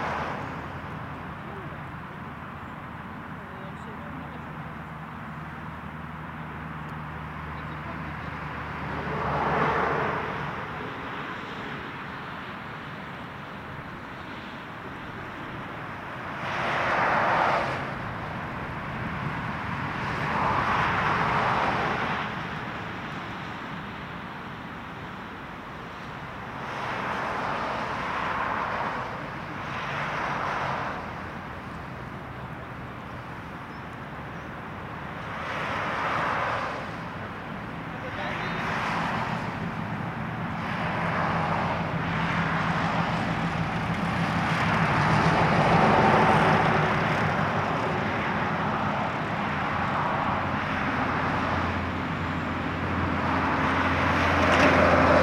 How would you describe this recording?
Cars going away from the parking, other cars and trucks passing by on the road, sunny windy day. Tech Note : Sony PCM-D100 internal microphones, XY position.